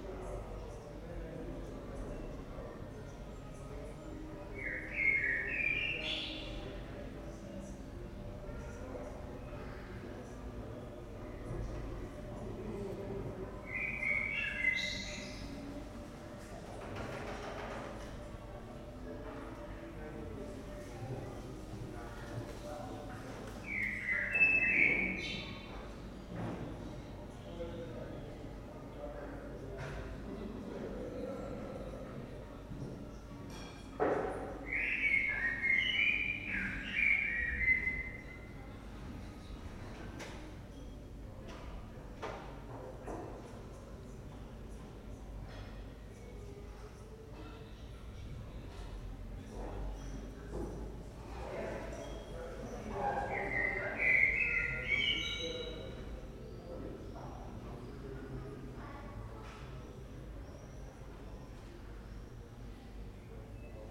Husemannstraße, Berlin, Germany - first backyard
Backyard, day, vacuum cleaner, birds, people, children
Deutschland, 25 May